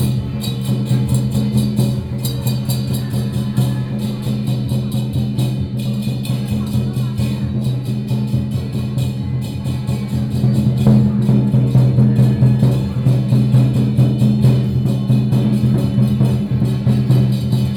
At the station next to the Community Carnival, Binaural recordings, Traditional percussion performances, Sony PCM D50 + Soundman OKM II
Beitou District, Taipei City, Taiwan, 3 November 2013, ~14:00